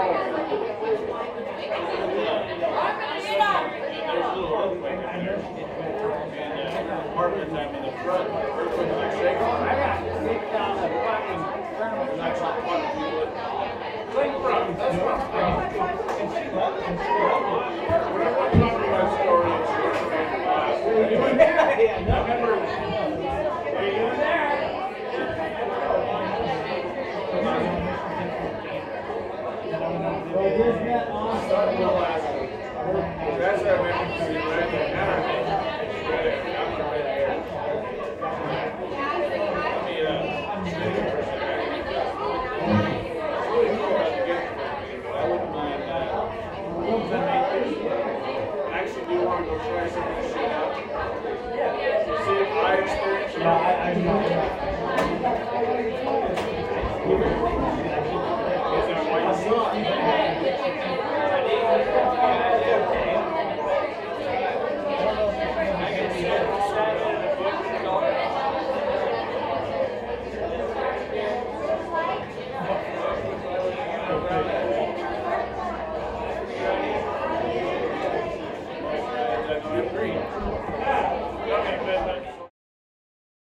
A normal dollar slice night at the liberty street tavern